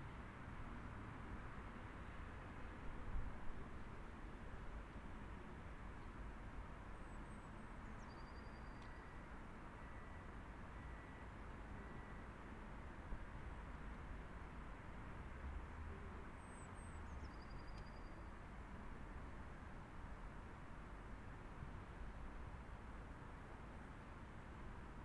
Caputsteenpark, Mechelen, België - Caputsteenpark
[Zoom H4n Pro] Small park next to the Mechelen jail. Fragments of a conversation between a woman in the park and her husband behind the jail walls.